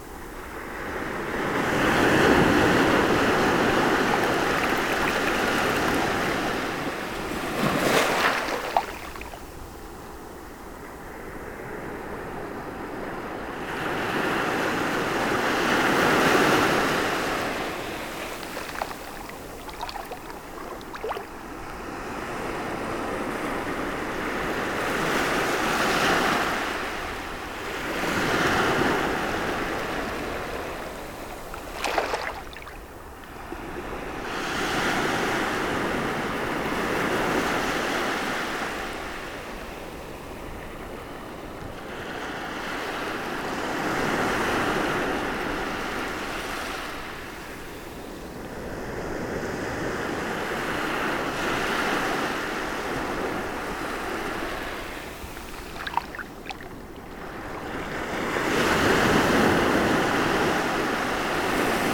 La Faute-sur-Mer, France - The sea
Recording of the sea during high tide, receiding to low tide. Big but quiet waves.